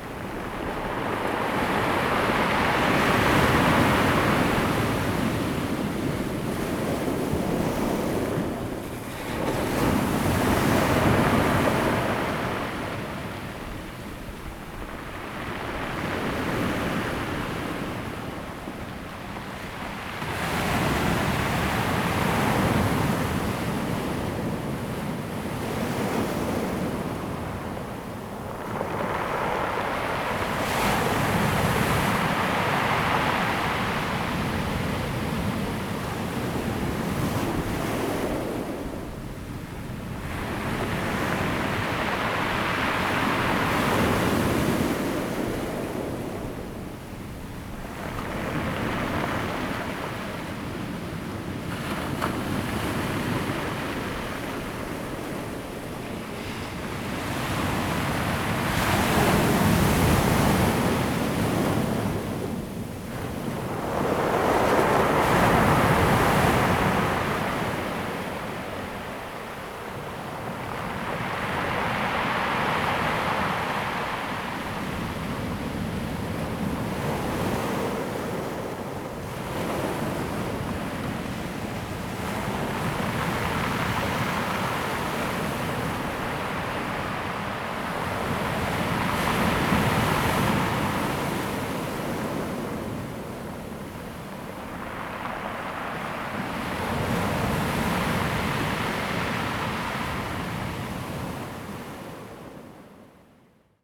南田村, Daren Township - Sound of the waves
Sound of the waves, Circular stone coast
Zoom H2n MS +XY
Daren Township, 台26線, 5 September 2014